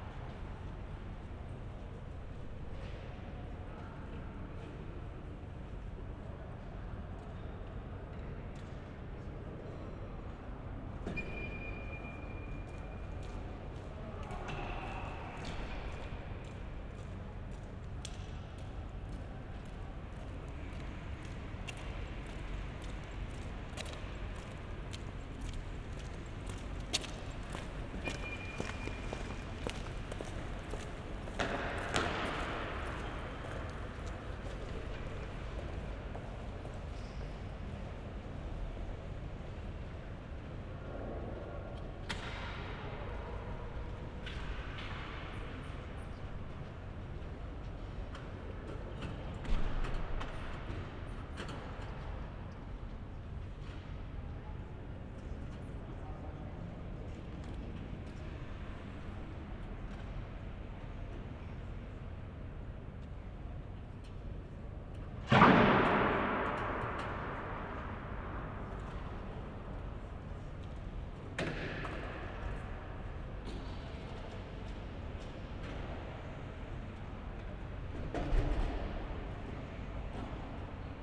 berlin, friedrichstr., kontorenhaus - entry hall
echoes and ambient in the huge entrance hall of Kontorenhaus. the building hosts agencies, small businesses, a restaurant and a hotel.